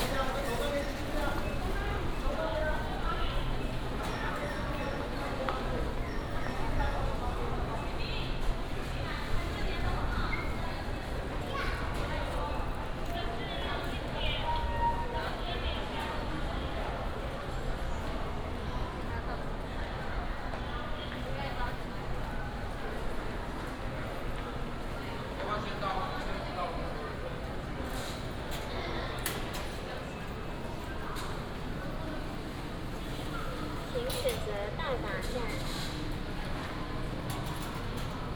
In the station platform, From the station platform to the station hall